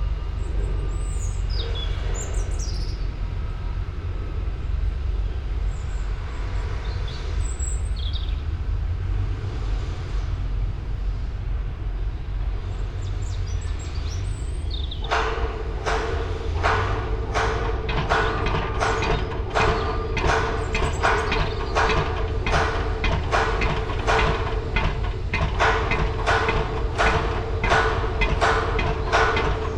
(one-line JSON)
{"title": "River Kennet Reading, UK - Robins and Building Construction", "date": "2016-08-31 09:04:00", "description": "New industrial units are being built on an old landfill site by the river Kennet near Reading. A couple of Robins engage in winter song and the pile-driver and hammers provide an accompaniment. Sony M10 with homemade boundary array.", "latitude": "51.43", "longitude": "-0.98", "altitude": "39", "timezone": "Europe/London"}